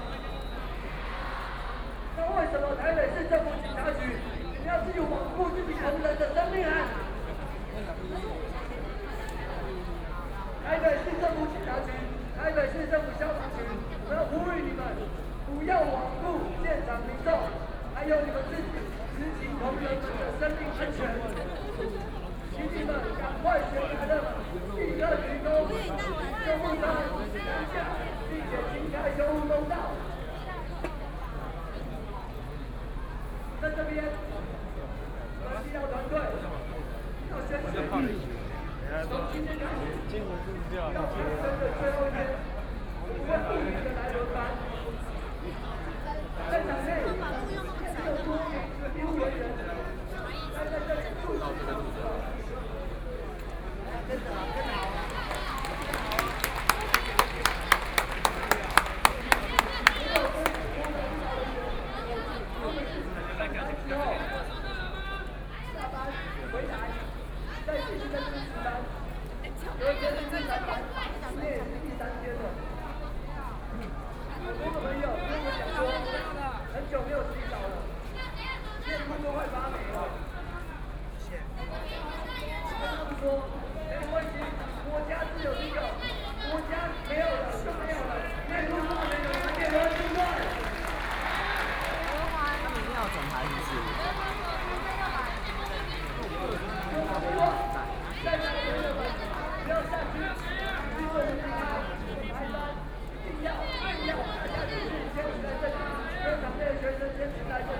Protest, University students gathered to protest the government
Binaural recordings
Zhongzheng District, Zhènjiāng Street, 5號3樓, 20 March